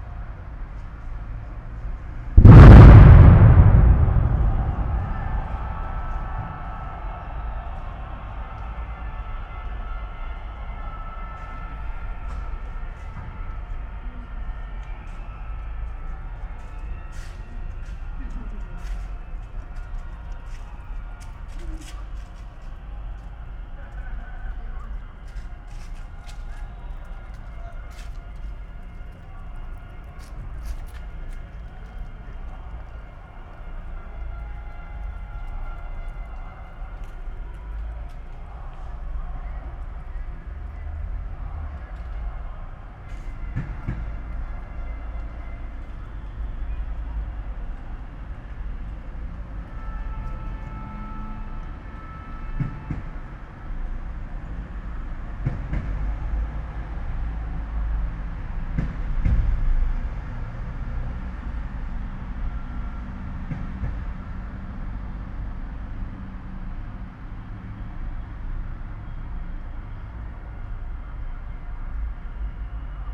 people demonstrating and calling ”gotof je” to the mayor and city government, a lot of pyrotechnics were used at the time